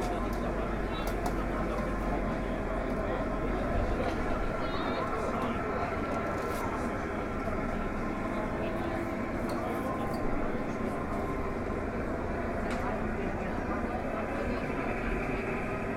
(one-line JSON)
{"title": "Steele, Essen, Deutschland - straßenfest kaiser-otto-platz", "date": "2014-09-07 14:23:00", "description": "essen-steele: straßenfest kaiser-otto-platz", "latitude": "51.45", "longitude": "7.08", "altitude": "67", "timezone": "Europe/Berlin"}